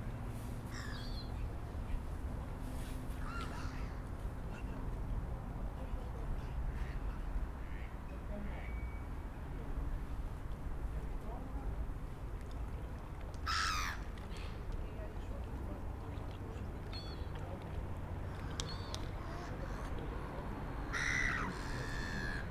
Schiffsteg, warten auf Überfahrt, Luino - Cannobio
Schiff, Luino-Cannobio, Winteratmosphäre, Möven, Motorengeräusche, Wartende, Passagiere